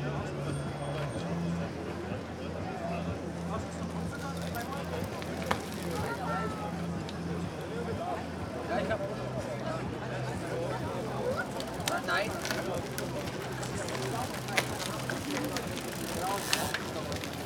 Nikolassee, Berlin - cyclist chatedral
a gathering of cyclist. the street is taken by a huge cyclist crowd, chatting, singing, playing music and radios. the tour is about to start any minute. cyclists react with ringing their bells.